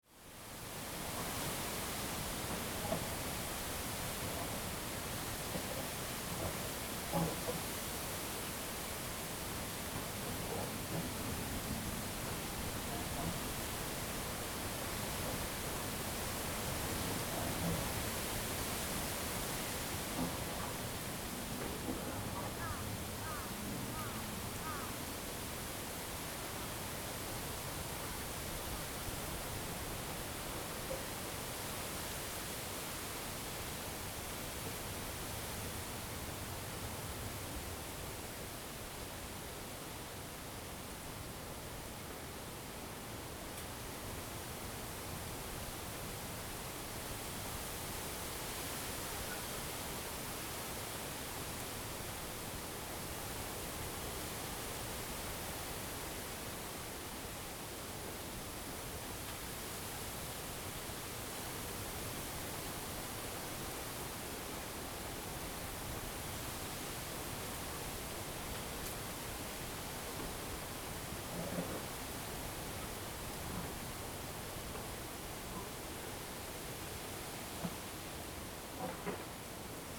奎輝里 田尾, Fuxing Dist. - Close to bamboo
Close to bamboo, wind
Zoom H2n MS+XY